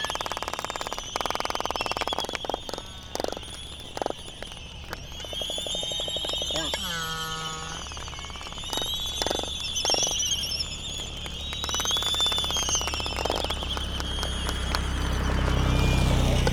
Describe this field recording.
Laysan albatross dance soundscape ... Sand Island ... Midway Atoll ... laysan calls and bill clapperings ... background noise from buggies ... open lavalier mics ... warm ... slightly blustery morning ...